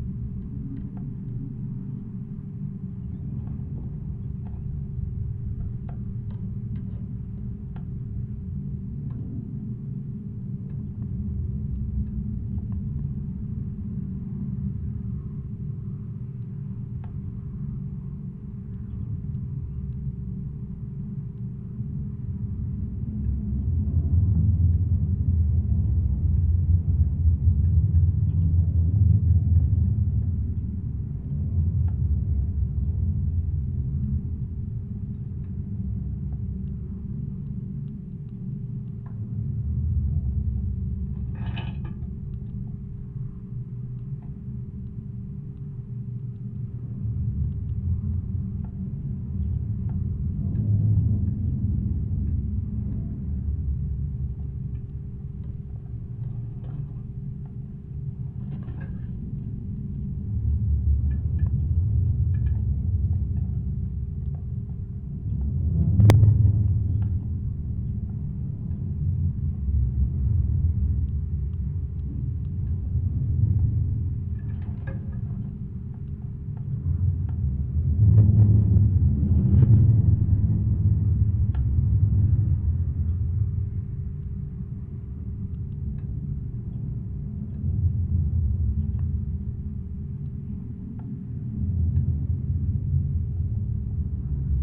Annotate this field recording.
Recording of support cable for an electricity pylon during high winds with foliage rubbing against the cable